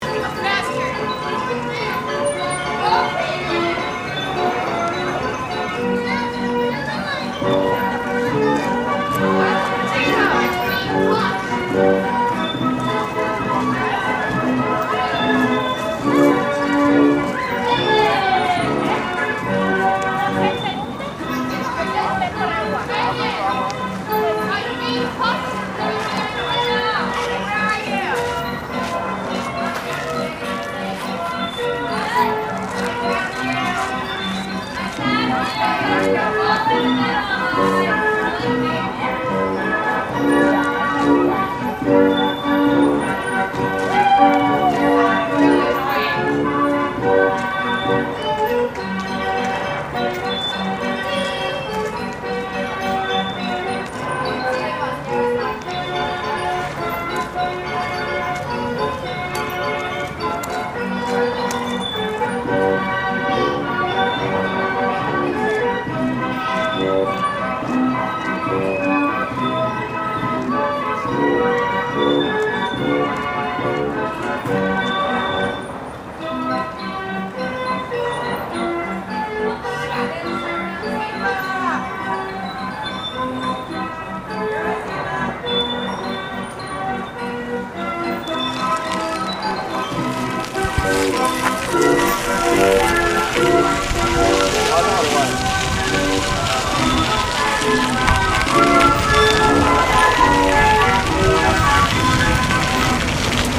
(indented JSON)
{
  "title": "Carousel on the National Mall, DC",
  "date": "2010-11-02 12:50:00",
  "description": "A carousel in front of the Smithsonian Castle and Arts & Industry Bldg. on the National Mall (Henry Park) in Washington, DC. Followed by a bike ride east toward the Capitol. Sounds include carousel music, buses (gasoline and electric), and reverse beeping sound from construction vehicles.",
  "latitude": "38.89",
  "longitude": "-77.02",
  "altitude": "7",
  "timezone": "America/New_York"
}